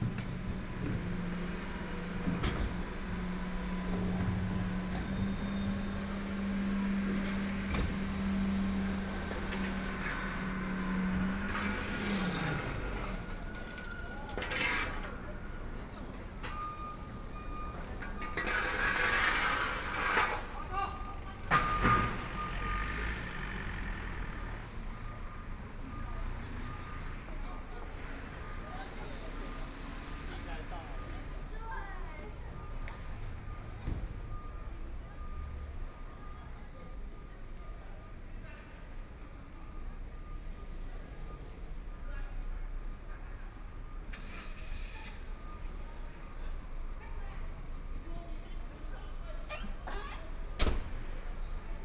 Dianchi Road, Shanghai - in the Street
Walking on the road, Garbage trucks are finishing the sound of garbage, Binaural recording, Zoom H6+ Soundman OKM II